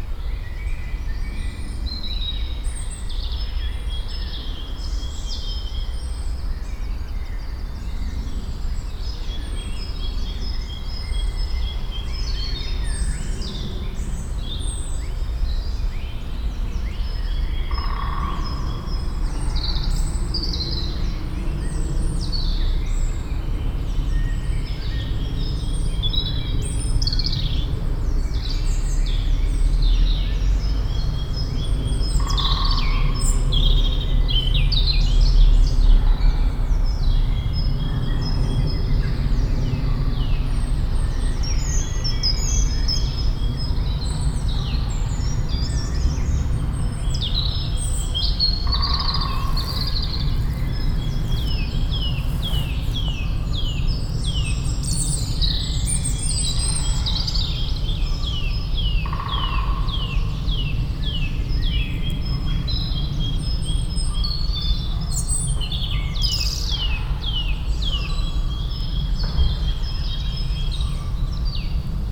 Gebrüder-Funke-Weg, Hamm, Germany - morning spring birds Heessener Wald
hum of the morning rush hour still floating around the forest in seasonal mix with bird song